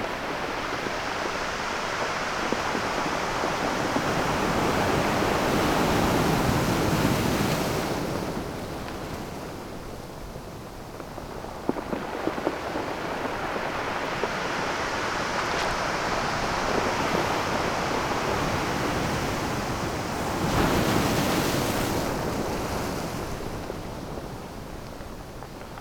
slowly approaching the ocean. beach is filled with rather big rocks. while the waves retract, rocks bounce off each other making a tense, meaty, crunchy sound similar to cracking ice. at the end i went up to close and got washed off my feet by an unexpectedly big wave
Portugal, 8 May 2015